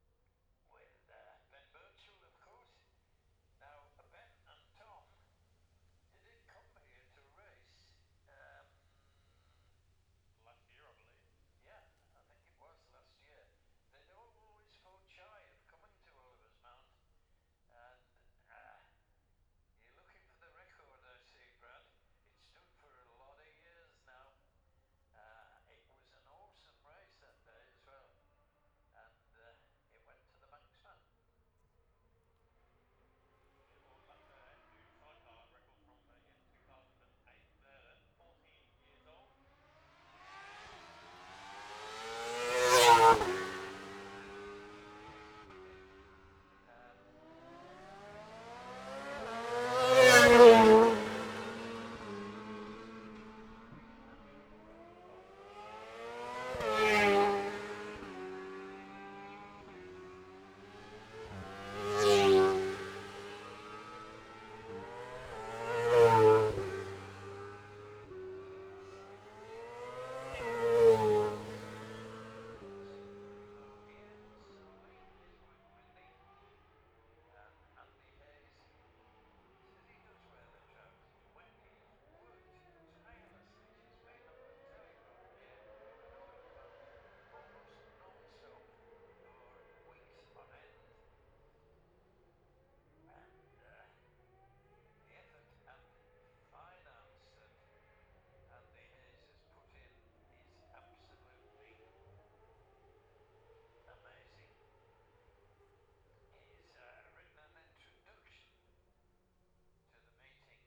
Jacksons Ln, Scarborough, UK - gold cup 2022 ... sidecar practice ...
the steve henshaw gold cup 2022 ... sidecar practice ... dpa 4060s on t-bar on tripod to zoom f6 ...